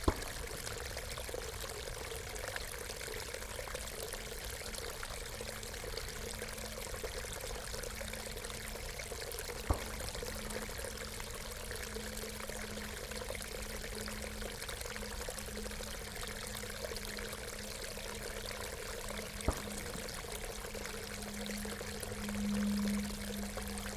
Sowia, Siemianowice Śląskie - water flow after rain, aircraft
small stream of water flowing into pond after rain, an aircraft crossing, creating a strong doppler sound effect
(Sony PCM D50, DPA4060)